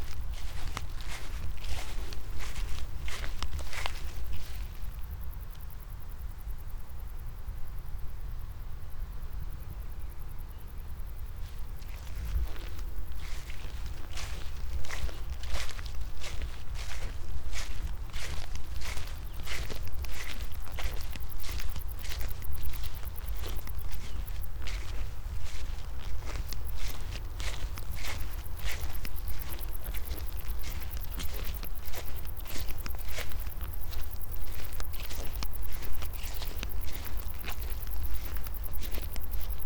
mown meadow, quiet crickets, walk